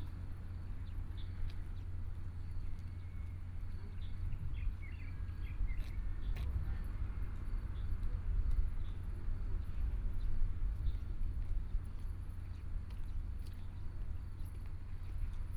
南濱公園, Hualien City - at Waterfront Park
Birdsong, Morning at Waterfront Park, Morning people are walking and jogging
Binaural recordings